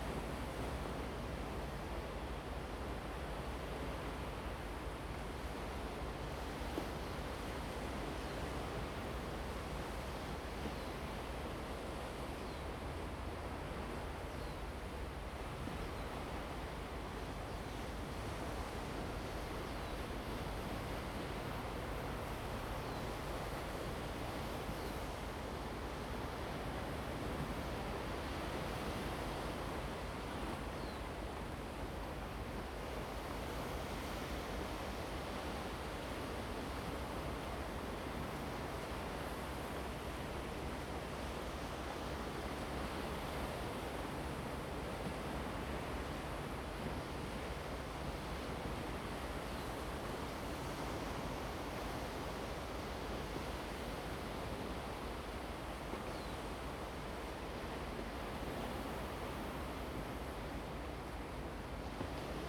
天福村, Hsiao Liouciou Island - On the coast
On the coast, Sound of the waves
Zoom H2n MS +XY
Pingtung County, Taiwan